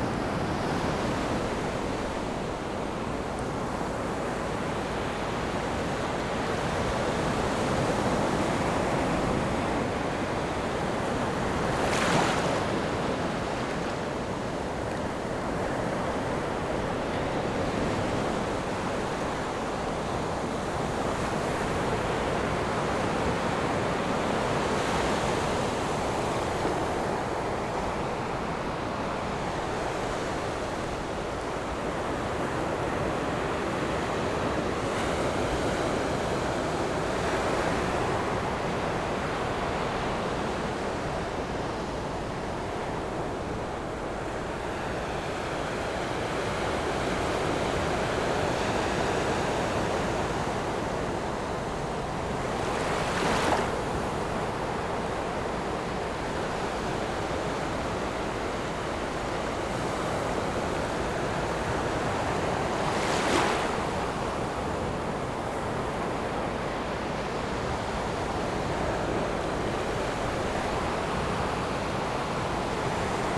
Sound of the waves, In the beach
Zoom H6 MS+ Rode NT4
Yilan County, Taiwan, 26 July, ~15:00